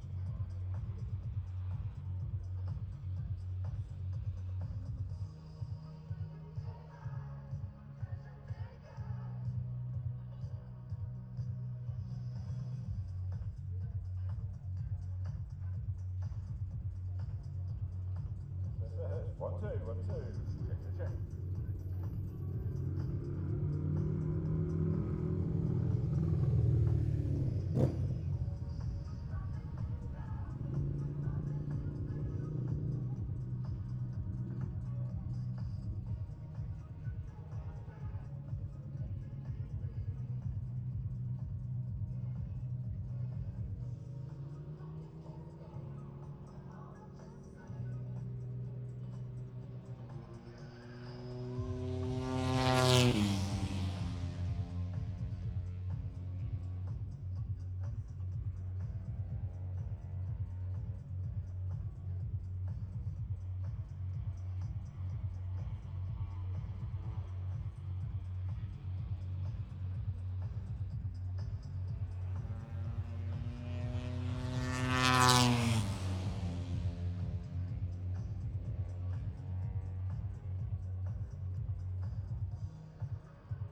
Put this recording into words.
british motorcycle grand prix 2022 ... moto three free practice three ... bridge on wellington straight ... dpa 4060s clipped to bag to zoom h5 ... plus disco ...